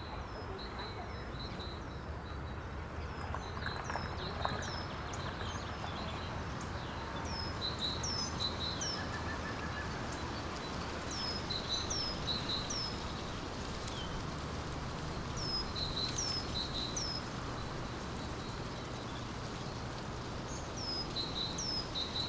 Martha-Stein-Weg, Bad Berka, Deutschland - In the Park in Spring
A binaural recording.
Headphones recommended for best listening experience.
Winds and bird calls, human voices and vehicular drones around the park.
Recording technology: Soundman OKM, Zoom F4.
Landkreis Weimarer Land, Thüringen, Deutschland, 1 April 2021, 15:00